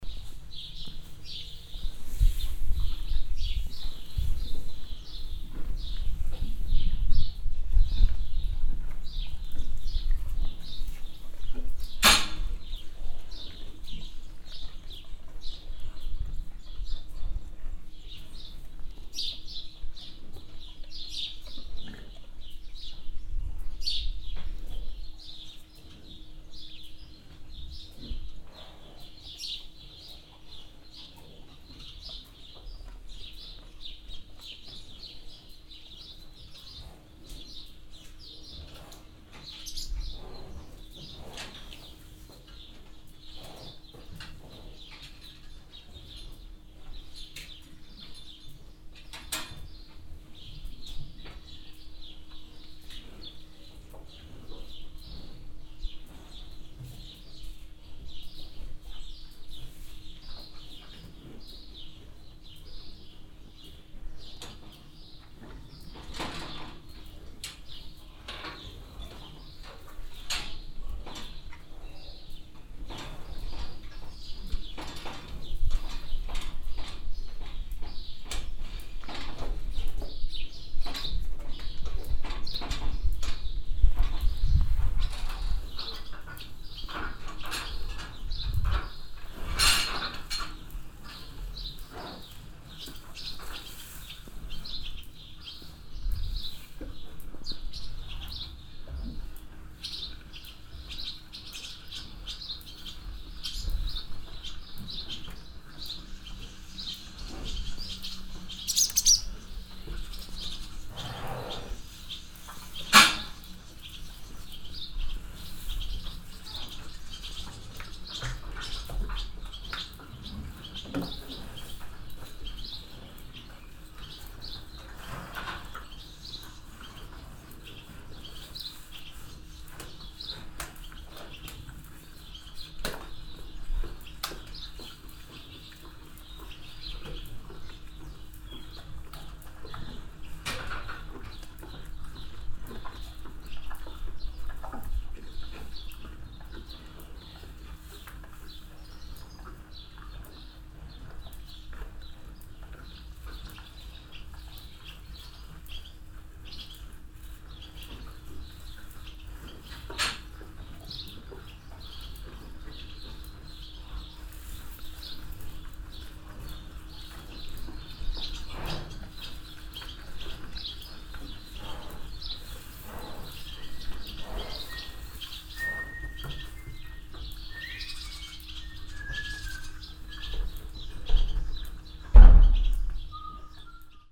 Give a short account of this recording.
On a farm yard in a cow shed. The sound of the animals moving in their boxes and the swallows inside the shed. At the end a whisteling boy crossing the yard. Knaphoscheid, Bauernhof, Kuhherde, Auf einem Bauernhof in einer Kuhscheune. Das Geräusch von den Tieren, die sich in ihren Stallboxen bewegen, und die Schwalben in der Scheune. Am Schluss ein Junge, der pfeifend den Hof überquert. Knaphoscheid, cour de ferme, étable à vaches, Dans l’étable à vaches d’une cour de ferme. Le bruit des animaux qui bougent dans leurs box et les hirondelles dans l’étable. A la fin, un garçon qui traverse la cour en sifflant. Project - Klangraum Our - topographic field recordings, sound objects and social ambiences